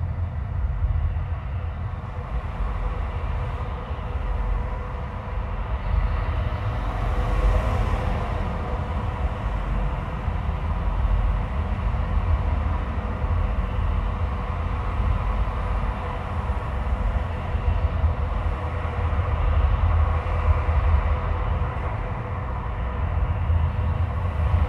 bridge over Frankenschnellweg, Nürnberg/Muggenhof
Nuremberg, Germany